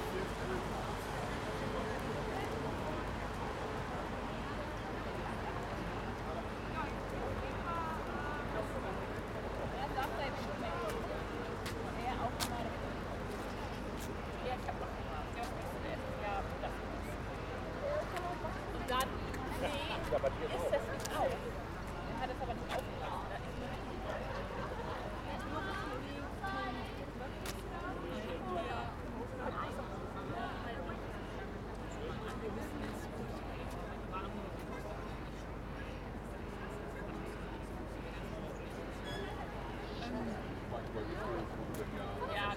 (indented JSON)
{
  "title": "Bahnhofsbrücke, Kiel, Deutschland - Street life",
  "date": "2017-08-05 11:28:00",
  "description": "Summer street life at the pedestrian area at the harbour, people walking and talking, cries of seagulls. iPhone 6s Plus with Shure Motiv MV88 microphone in 120° stereo mode.",
  "latitude": "54.32",
  "longitude": "10.13",
  "altitude": "2",
  "timezone": "Europe/Berlin"
}